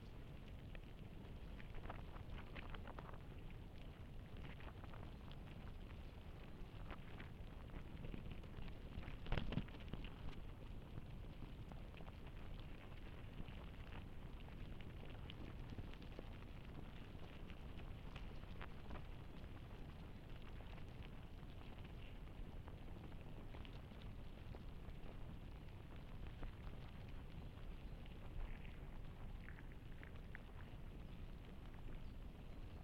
Landgoed Denk en Werk, Spankeren, Netherlands - Anthill in Bockhorsterbos
Anthill recorded with two hydrophones.
Rheden, Gelderland, Nederland, 5 May